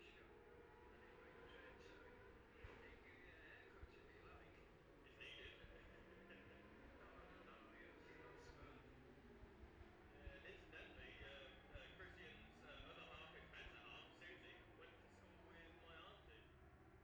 Jacksons Ln, Scarborough, UK - gold cup 2022 ... 600s practice ...
the steve henshaw gold cup 2022 ... 600s practice group one then group two ... dpa 4060s clipped to bag to zoom h5 ...